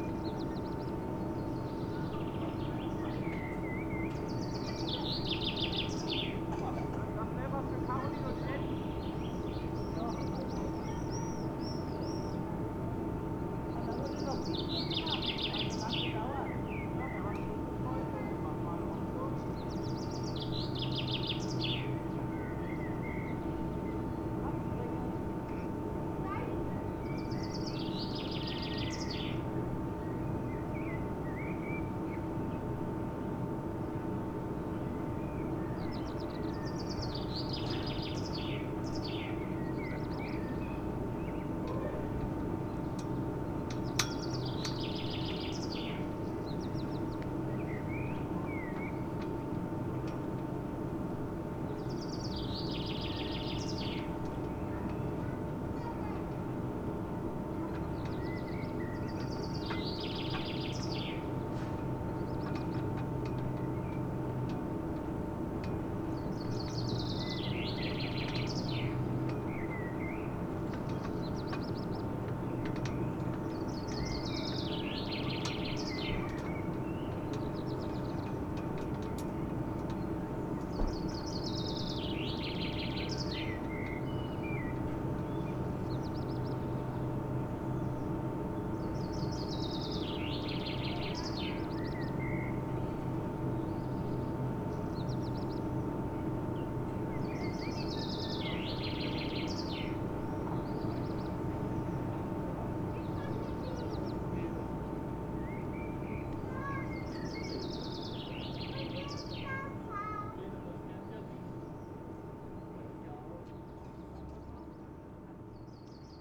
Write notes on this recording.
fresh spring day, noise of chairlift motor, wind-whipped ropes of flagstaffs, singing birds, tourists, the city, the country & me: may 6, 2011